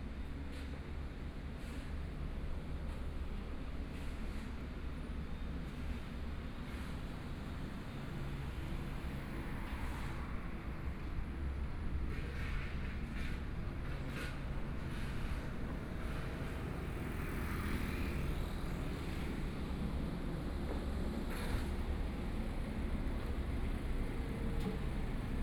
walking on the road, Traffic Sound
Binaural recordings
Zoom H4n+ Soundman OKM II + Rode NT4